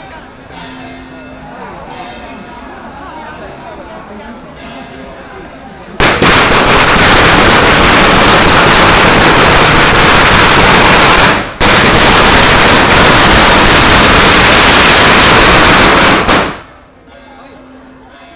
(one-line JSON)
{"title": "Baishatun, 苗栗縣通霄鎮 - Walk through the alley", "date": "2017-03-09 11:10:00", "description": "Matsu Pilgrimage Procession, Crowded crowd, Fireworks and firecrackers sound, Walk through the alley in the village", "latitude": "24.57", "longitude": "120.71", "altitude": "7", "timezone": "Asia/Taipei"}